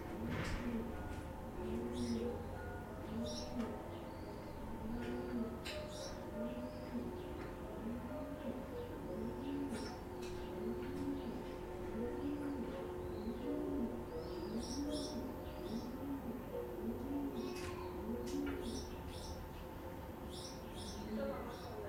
{
  "title": "Zalman ha-Tsoref St, Acre, Israel - Alley, Acre",
  "date": "2018-05-03 12:05:00",
  "description": "House, talk, arabic, radio",
  "latitude": "32.92",
  "longitude": "35.07",
  "altitude": "13",
  "timezone": "Asia/Jerusalem"
}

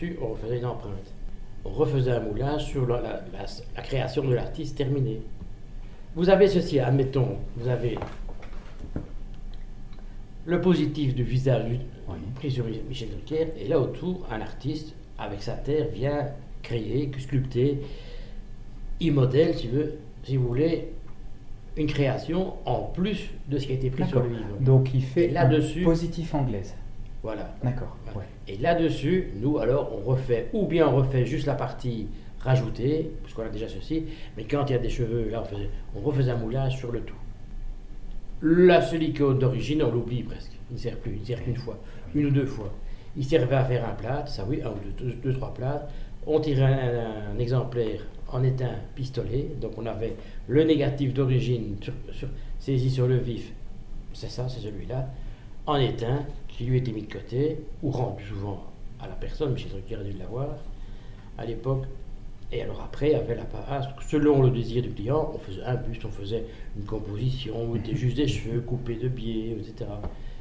{
  "title": "Genappe, Belgique - The moulder",
  "date": "2016-02-22 17:10:00",
  "description": "Testimony of bernard Legrand, a moulder, or perhaps consider him as a sculptor, who made an excellent work in a nitriding factory.",
  "latitude": "50.63",
  "longitude": "4.51",
  "altitude": "116",
  "timezone": "Europe/Brussels"
}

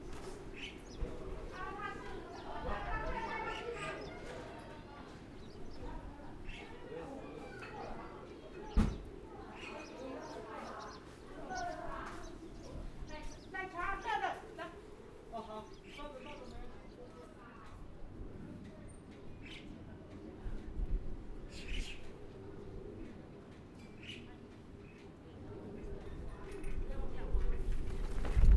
{
  "title": "芳苑村, Fangyuan Township - On the streets of a small village",
  "date": "2014-03-09 08:18:00",
  "description": "The sound of the wind, On the streets of a small village\nZoom H6 MS",
  "latitude": "23.93",
  "longitude": "120.32",
  "altitude": "5",
  "timezone": "Asia/Taipei"
}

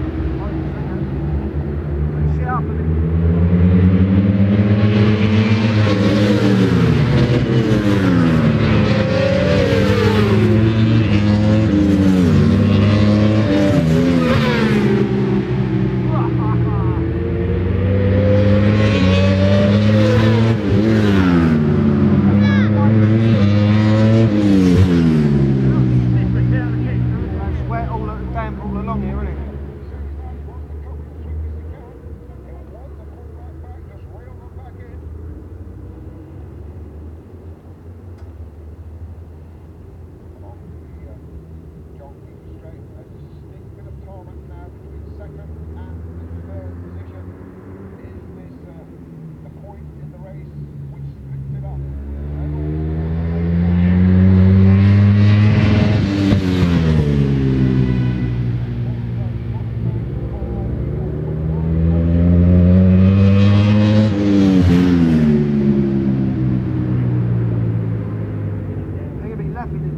{
  "title": "West Kingsdown, UK - World Superbikes 2000 ... race two",
  "date": "2000-10-15 15:30:00",
  "description": "World Superbikes 2000 ... race two ... one point stereo mic to minidisk ...",
  "latitude": "51.35",
  "longitude": "0.26",
  "altitude": "152",
  "timezone": "GMT+1"
}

